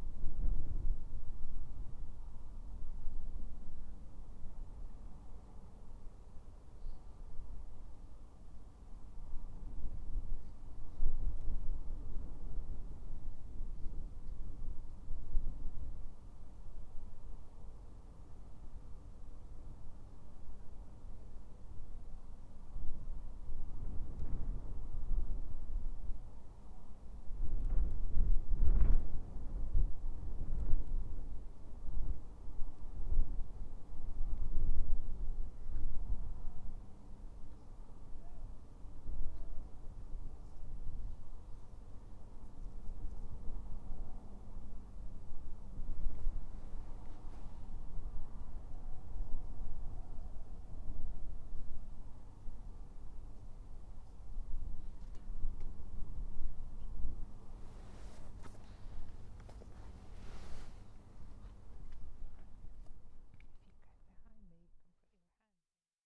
Dungeness National Nature Reserve, Romney Marsh, Kent, UK - waiting for a train that never comes
I waited for quite a long time for the 3.30 from Romney to pull into view; I wanted to record its lonesome whistle ringing out against the bleak and treeless sky. But the train never came. Instead I found myself leaning on the station fence and listening to the dull drone of the power station, the shifting wind, the emptiness and the quiet. The occasional seagull chips in but the main sounds here are of the wind whipping about. Dungeness reminds me of the Wild West somehow. And standing at the station listening intently to the quiet sounds, I felt like I was in that amazing movie - Once upon a Time in the West. Mark and I kept singing the harmonica refrain from that film to each other as we traipsed back, no train to be found.
31 January 2015